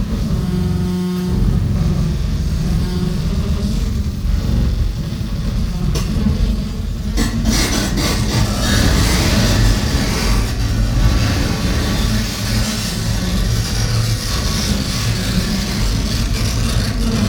cologne, deutz mülheimer str, gebäude, visual sound festival, michael vorfeld - koeln, deutz mülheimer str, gebäude 9, visual sound festival, marcus schmickler
soundmap nrw: social ambiences/ listen to the people - in & outdoor nearfield recordings